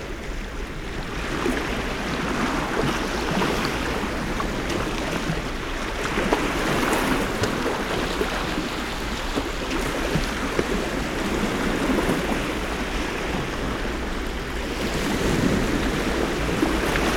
Sunrise on the beach, april 10th 2009, Taavi Tulev